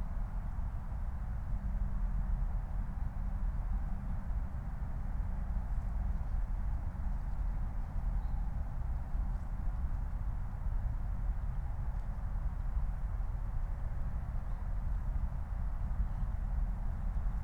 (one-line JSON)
{"title": "Moorlinse, Berlin Buch - near the pond, ambience", "date": "2020-12-23 15:19:00", "description": "15:19 Moorlinse, Berlin Buch", "latitude": "52.64", "longitude": "13.49", "altitude": "50", "timezone": "Europe/Berlin"}